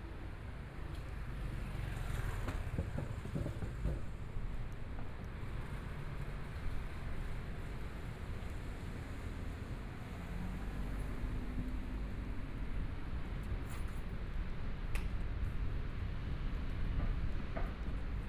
Walking in the small streets, Traffic Sound, Binaural recordings, Zoom H4n+ Soundman OKM II